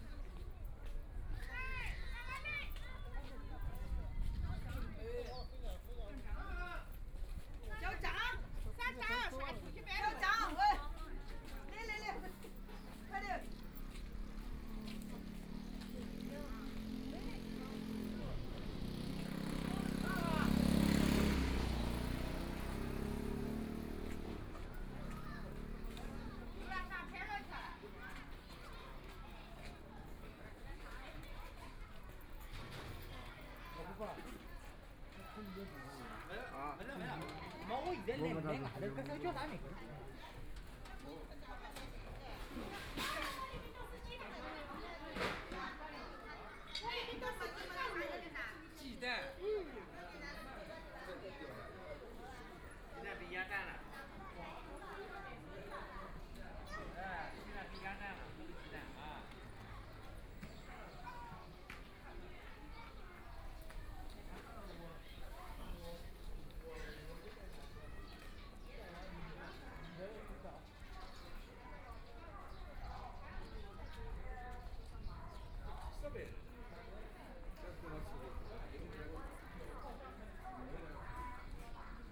Liu He Kou Rd., Shanghai - Antiques Market
Walking in the Antiques Market, Binaural recordings, Zoom H6+ Soundman OKM II